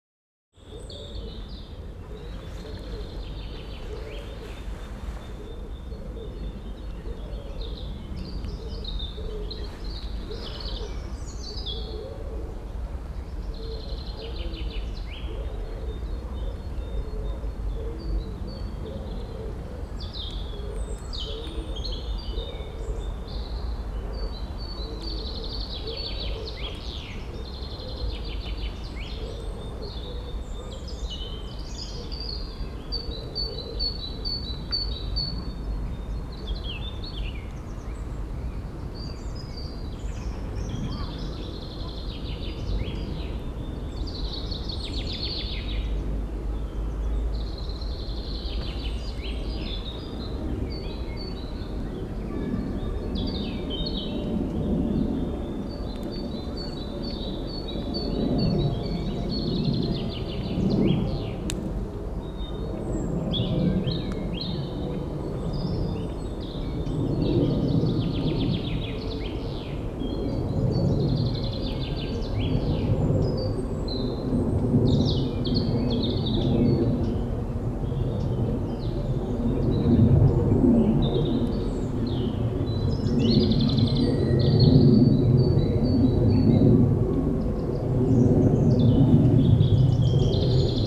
Borbeck - Mitte, Essen, Deutschland - essen, schloß borbeck, path under trees
Im Schloßpark Borbeck auf einem Weg unter Bäumen. Die Klänge der Vögel an einem sonnigen, leicht windigem Frühlingstag. Ein tiefsonoriges Flugzeug kreuzt den Himmel.
In the park of Schloß Borbeck on a path under trees. The sounds of the birds at a sunny but windy spring day. A plane is crossing the sky.
Projekt - Stadtklang//: Hörorte - topographic field recordings and social ambiences